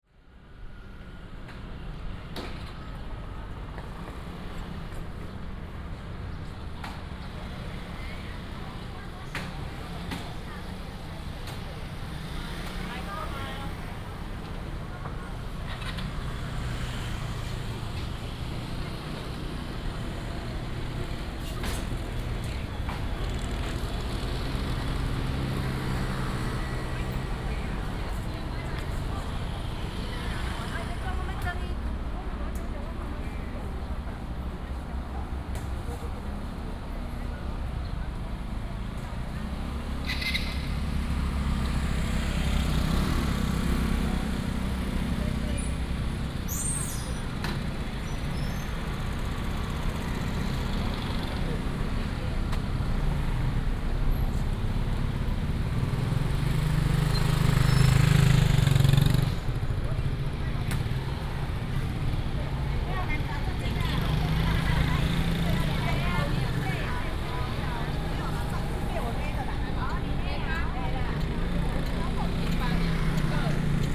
Dexing Rd., Huwei Township - Walking in the market
Walking in the market, motorcycle, Vendors, Helicopter sound
Huwei Township, Yunlin County, Taiwan, 3 March 2017